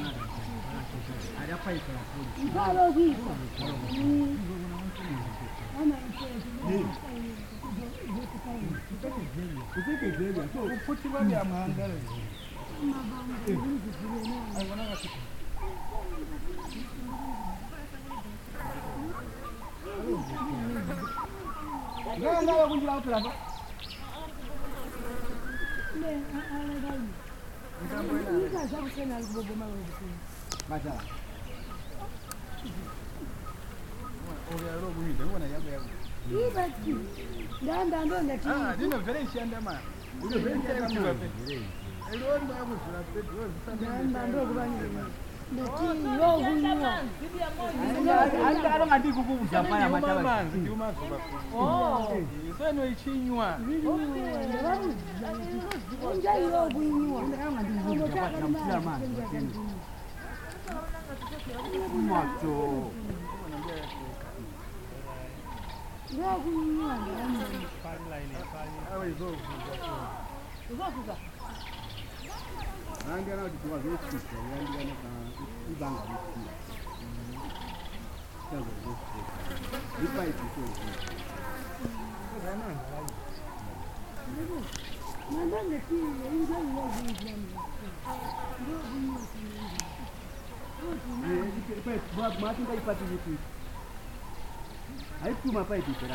Sebungwe River Mouth, Simatelele, Binga, Zimbabwe - Ambience near the new fish pond
Ambience at our arrival near the new fish pond build by the Tuligwazye Women's Group. Zubo Trust has been supporting the women in this new project.